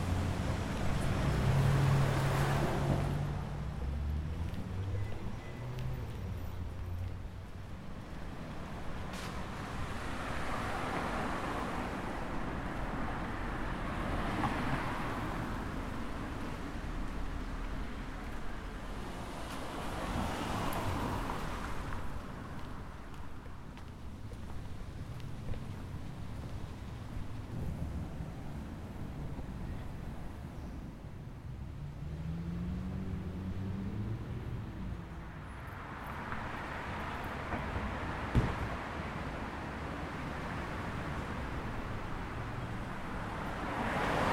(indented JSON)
{
  "title": "Noe Valley, San Francisco, CA, USA - Elevation Sound Walk",
  "date": "2013-09-19 10:00:00",
  "description": "Recordings starting at the top of Billy Goat Hill Park and ending in Mission. Recordings took place every 5 minutes for 1 minute and was then added together in post-production. The path was decided by elevation, starting from high to low.",
  "latitude": "37.74",
  "longitude": "-122.43",
  "altitude": "99",
  "timezone": "America/Los_Angeles"
}